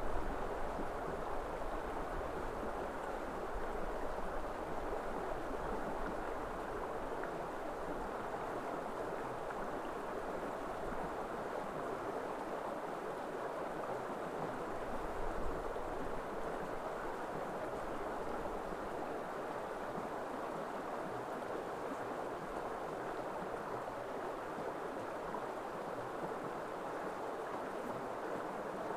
a very quick visit with the pecos river.
zoomh4npro
NM, USA